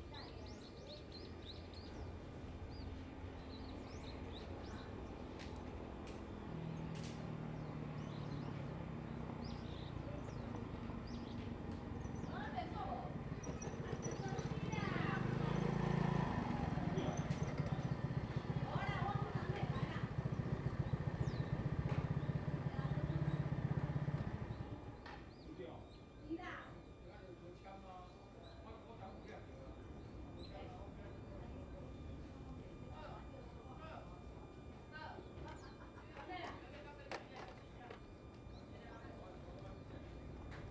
觀音洞, Lüdao Township - in front of the temple
In the square, in front of the temple
Zoom H2n MS+XY